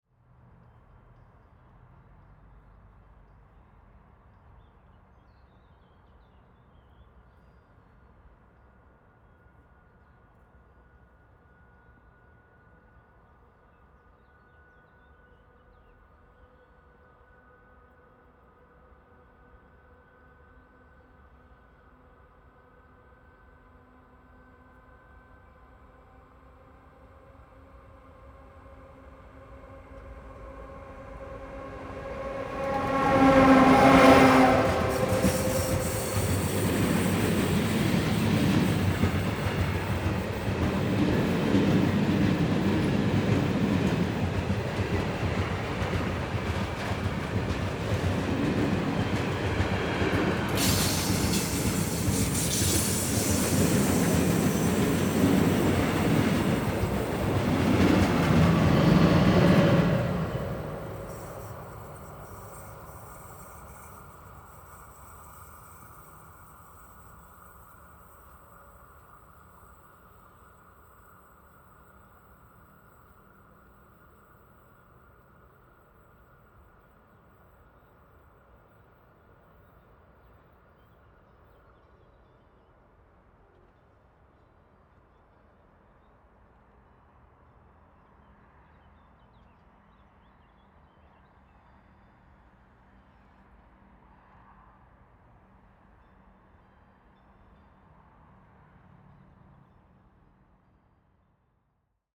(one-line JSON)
{"title": "Heavy goods train 1meter away on the bridge, Vltavanů, Praha, Czechia - Heavy goods train 1meter away on the bridge", "date": "2022-06-14 13:11:00", "description": "The footpath on this bridge runs only a meter from the rail track, so passing trains feel extremely close. This one isn't moving so fast, but the vibrations in the bridge structure underfoot are still noticeable.", "latitude": "50.03", "longitude": "14.40", "altitude": "194", "timezone": "Europe/Prague"}